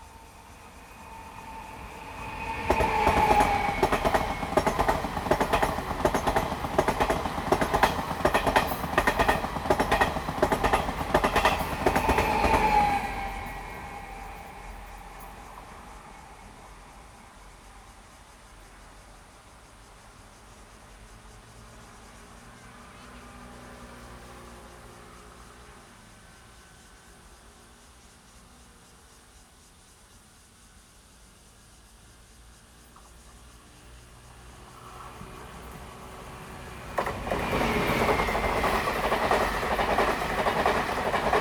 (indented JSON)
{
  "title": "楊梅區民富路三段, Taoyuan City - Next to the railroad tracks",
  "date": "2017-08-11 18:44:00",
  "description": "Next to the railroad tracks, Traffic sound, Cicadas, The train passes by, Zoom H2n MS+XY",
  "latitude": "24.93",
  "longitude": "121.10",
  "altitude": "128",
  "timezone": "Asia/Taipei"
}